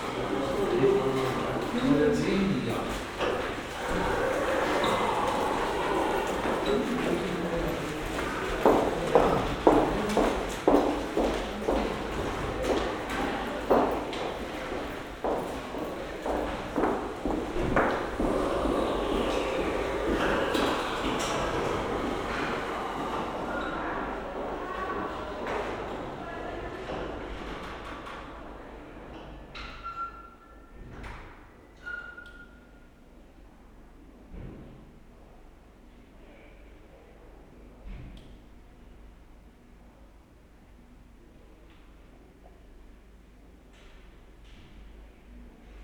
berlin, walterhöferstraße: zentralklinik emil von behring - the city, the country & me: emil von behring hospital, main entrance
automatic doorway at the main entrance, visitors
the city, the country & me: september 6, 2012
Gimpelsteig, Berlin, Germany